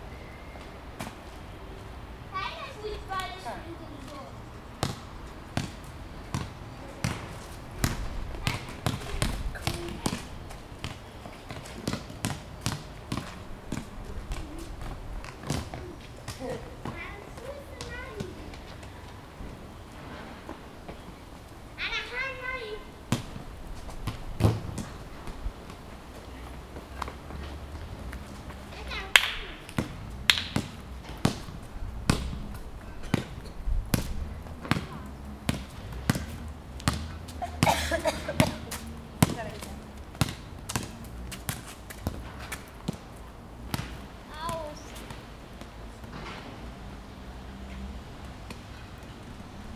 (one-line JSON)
{"title": "AfricanTide, Igglehorst, Dortmund - Corinas ball game...", "date": "2017-05-15 10:15:00", "description": "Corina is one of the ladies looking after the children at AfricanTide Igglehorst.", "latitude": "51.51", "longitude": "7.41", "altitude": "87", "timezone": "Europe/Berlin"}